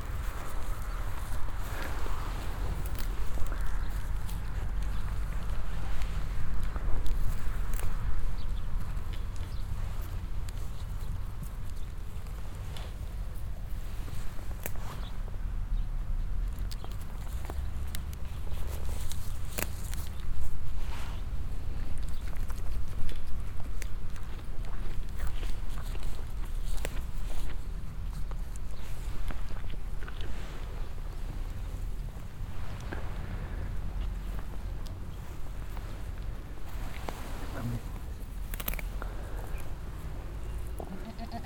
{
  "title": "roder, goats in a corral",
  "date": "2011-09-17 18:10:00",
  "description": "A group of goats on a meadow in a corral nearby the road.The sounds of them bleating and eating grass. In the distance the sound of passing by traffic.\nRoder, Ziegen in einem Korral\nEine Gruppe von Zigen auf einer Wiese in einem Gehege an der Straße. Die Geräusche ihres Meckerns und Grasessens. In der Ferne das Geräusch von vorbeifahrendem Verkehr.\nRoder, chèvres dans un enclos\nUn groupe de chèvres dans un enclos sur un champ à proximité de la route. Le bruit qu’elles font en bêlant et en broutant l’herbe. Dans le lointain, on entend passer le trafic routier.",
  "latitude": "50.05",
  "longitude": "6.08",
  "altitude": "510",
  "timezone": "Europe/Luxembourg"
}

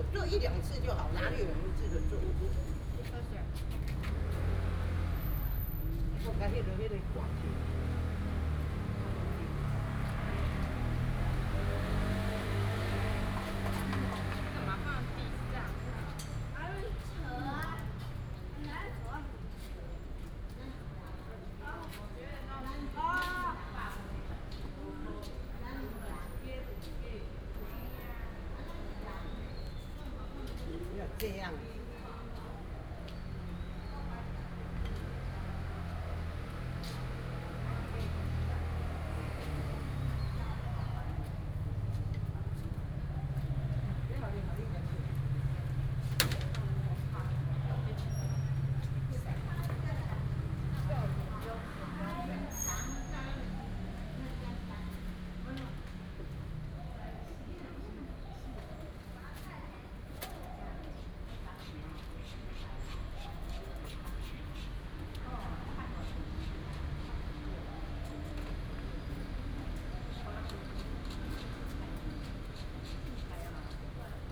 {
  "title": "龍生公園, Da'an District, Taipei City - Children and family",
  "date": "2015-07-21 07:25:00",
  "description": "Morning in the park, Children and family, The old woman in the park",
  "latitude": "25.03",
  "longitude": "121.54",
  "altitude": "19",
  "timezone": "Asia/Taipei"
}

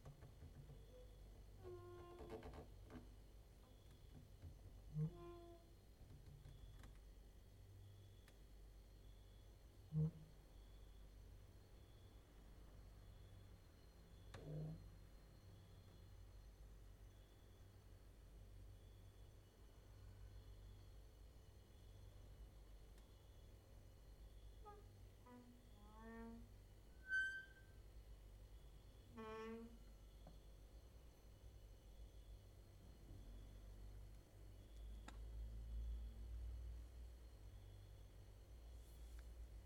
{
  "title": "Mladinska, Maribor, Slovenia - late night creaky lullaby for cricket/2",
  "date": "2012-08-07 00:25:00",
  "description": "cricket outside, exercising creaking with wooden doors inside",
  "latitude": "46.56",
  "longitude": "15.65",
  "altitude": "285",
  "timezone": "Europe/Ljubljana"
}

